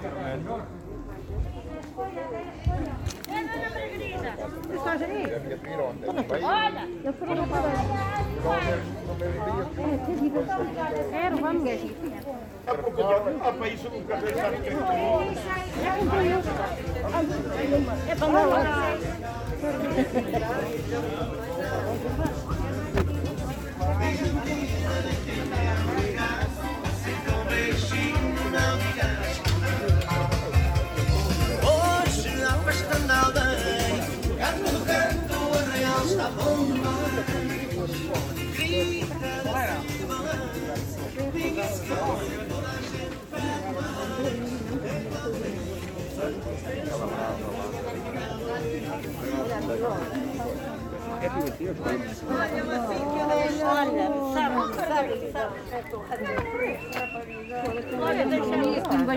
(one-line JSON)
{"title": "Largo Feira, Portugal - Feira de Vale de Açores", "date": "2021-08-05 07:09:00", "description": "Percorremos a feira de Vale de Açores e ouvem-se sons: a música, as conversas e tudo misturado num burburinho.", "latitude": "40.39", "longitude": "-8.24", "altitude": "113", "timezone": "Europe/Lisbon"}